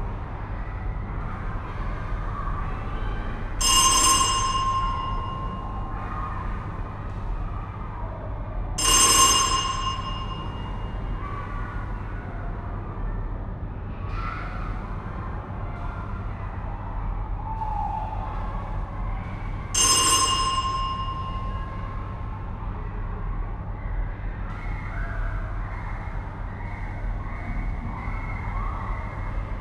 Lörick, Düsseldorf, Deutschland - Düsseldorf, GGS Lörick, gym hall
Inside the gym hall of an elementary school during a school break. The sound of the childrens voices reverbing in the empty space with the soft humming of the ventilation and some clicks from the neon lights. To the end some distant attacks at the window and wooden door and the ringing of the gym's door bell.
This recording is part of the intermedia sound art exhibition project - sonic states
soundmap nrw -topographic field recordings, social ambiences and art places